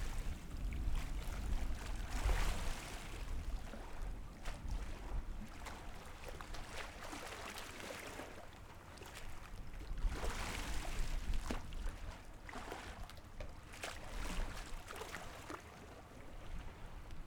白沙港, Beigan Township - In the dock
In the dock, Windy, Tide
Zoom H6 XY
福建省 (Fujian), Mainland - Taiwan Border, 13 October 2014